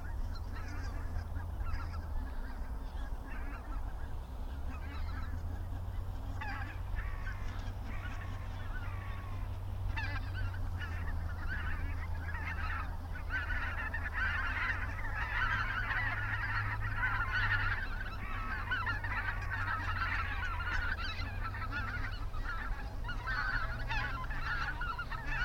Geese.Distant river and road traffic.
Soundfield Microphone, Stereo decode.
Gelderland, Nederland, 8 January